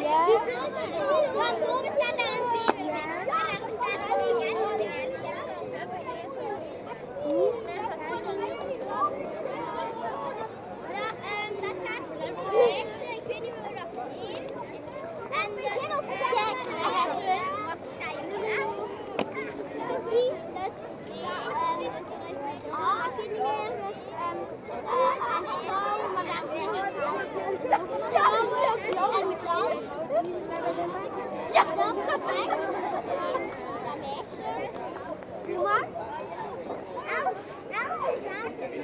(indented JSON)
{
  "title": "Gent, België - Scouts playing",
  "date": "2019-02-16 16:20:00",
  "description": "Boy scouts playing on the wide main square of the town, a local market and Peruvian people selling rubbish.",
  "latitude": "51.06",
  "longitude": "3.73",
  "altitude": "9",
  "timezone": "Europe/Brussels"
}